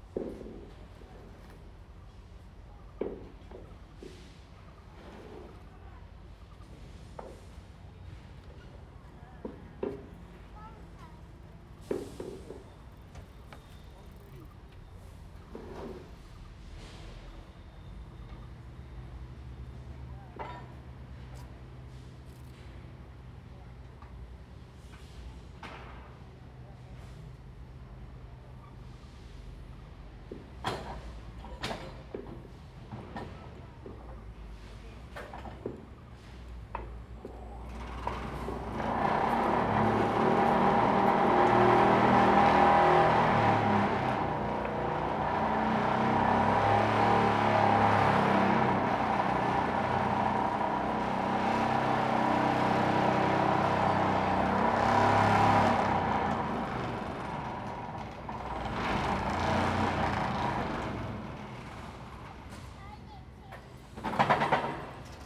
In the Park, Sound from the construction site
Zoom H2n MS+ XY